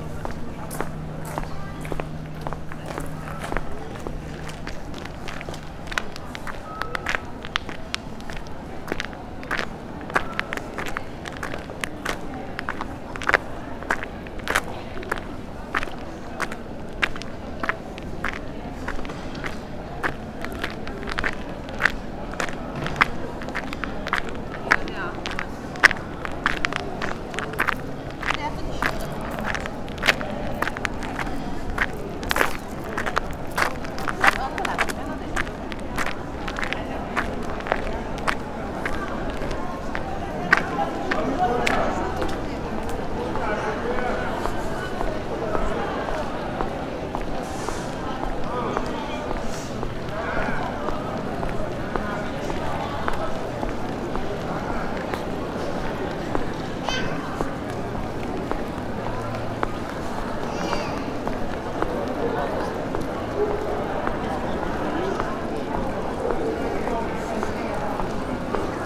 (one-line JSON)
{"title": "gravel path and streets, Castello, Venice - evening walk", "date": "2015-05-06 20:54:00", "description": "spring evening, birds, passers by, people talking, stony streets, yard ...", "latitude": "45.43", "longitude": "12.35", "altitude": "6", "timezone": "Europe/Rome"}